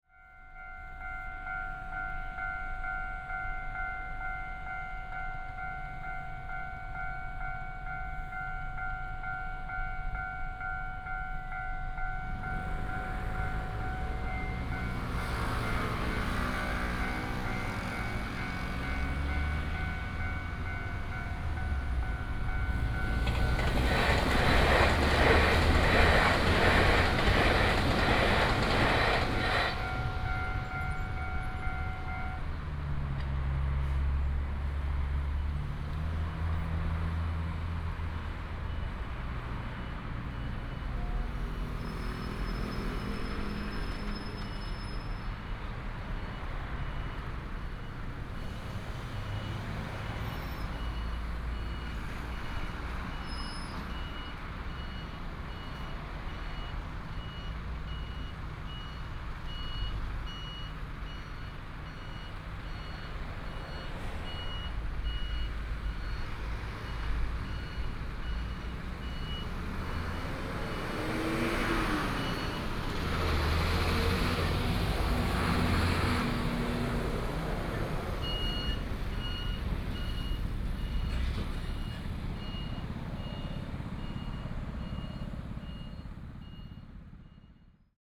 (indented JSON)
{
  "title": "Changlin Rd., Douliu City - near the railway crossing",
  "date": "2017-03-03 17:52:00",
  "description": "In the vicinity of the railway crossing, The train passes by, Traffic sound",
  "latitude": "23.69",
  "longitude": "120.51",
  "altitude": "38",
  "timezone": "Asia/Taipei"
}